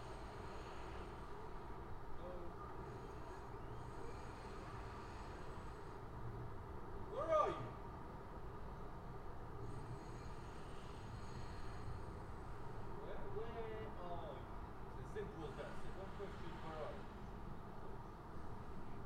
Heleny Junkiewicz, Warszawa, Poland - Targówek
East side of Warsaw. Recorded from the 10th floor flat.
soundDevices MixPre-6 + Audio Technica BP4025 stereo microphone.